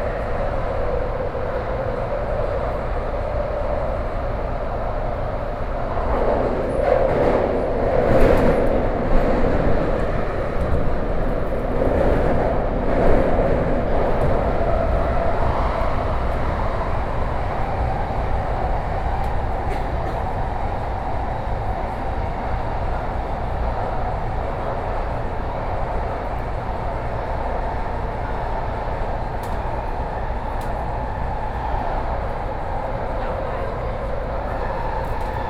Songshan-Xindian Line, Taipei City - In the subway